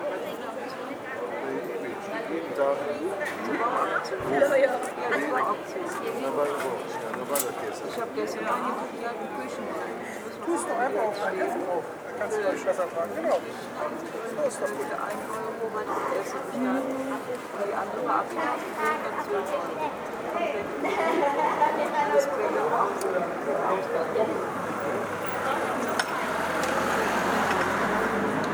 tondatei.de: wilhelmplatz, köln-nippes, flohmarkt - tondatei wilhelmplatz
flohmarkt, café, gespräche, straßenverkehr, autos